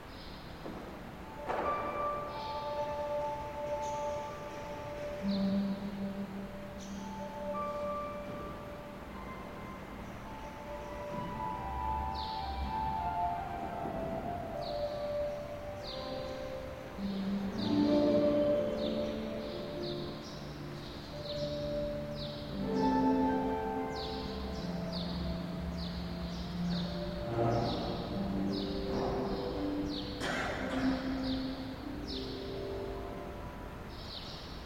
harp + bids in a church